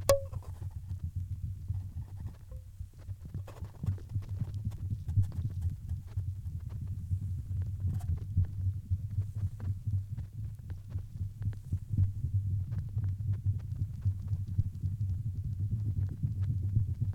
local guy shows old reel tape in the wind
old reel tape used for scaring birds away from strawberry patch
8 July, ~13:00